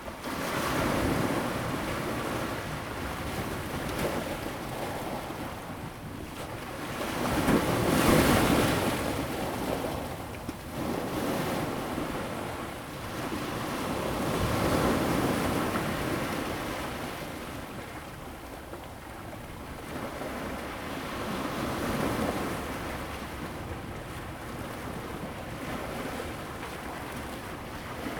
觀海路一段, Xinwu Dist., Taoyuan City - sound of the waves
in the beach, Seawater high tide time, sound of the waves
Zoom H2n MS+XY
Xinwu District, Taoyuan City, Taiwan